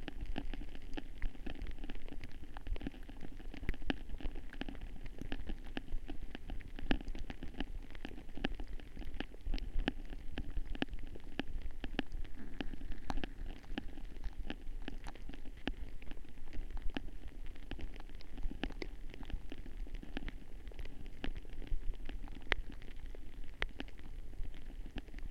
Vyžuonos, Lithuania, melting snow
a pair of contact mics in the last snow melting on spring's sun